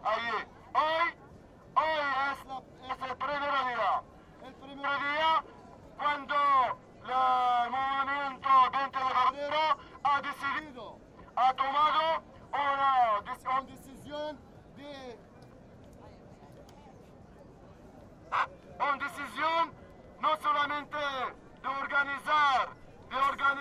Speech done by a Morocco activist along the spanish revolution of the 15th of may. This is something it has taken place in Barcelona, but it must happen everywhere.